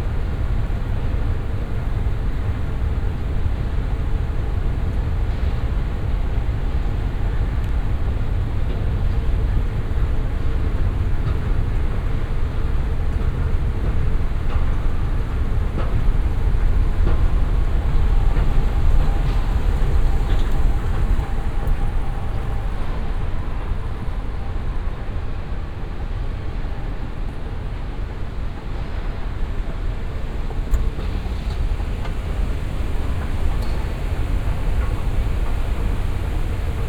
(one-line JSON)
{"title": "Madrid-Barajas Airport, terminal - a loop around the terminal", "date": "2014-12-01 13:05:00", "description": "(binaural) a walk around the terminal. passing by caffees, bar, shops, gates, riding moving pathwalks.", "latitude": "40.49", "longitude": "-3.59", "altitude": "610", "timezone": "Europe/Madrid"}